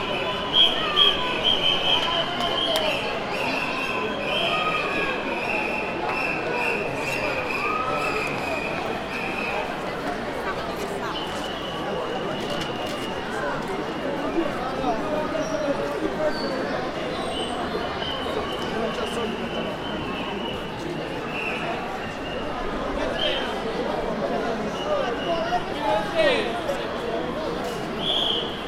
Taranto, Italy - Rally against industrial pollution
Public rally against the pollution created by the ILVA steelworks and ENI petrochemical plant and its link with the rising cases of cancer among the population. The two factories occupies an area that is approximately twice the one occupied by the nearby city of Taranto. This rally was one of the firsts after years of silent witnessing.
Recorded with Zoom H4N